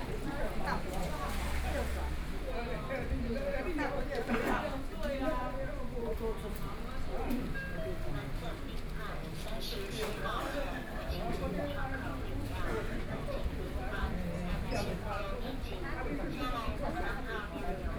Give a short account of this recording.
In the hospital lobby, Old people are waiting to receive medication, Between incoming and outgoing person, Binaural recordings, Zoom H4n+ Soundman OKM II